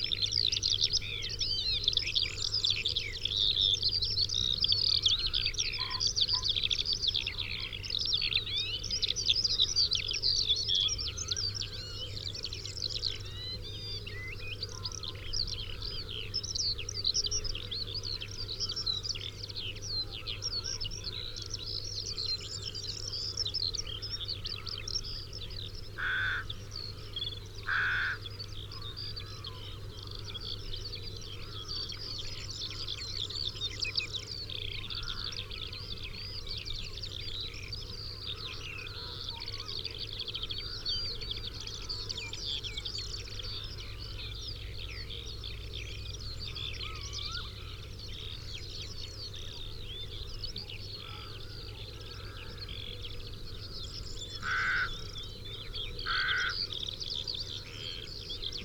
Green Ln, Malton, UK - open fields ... skylark springboard ...
open fields ... skylark springboard ... mics to minidisk ... song and calls from ... skylark ... corn bunting ... carrion crow ... linnet ... lapwing ... herring gull ... red-legged partridge ... pheasant ... rook ...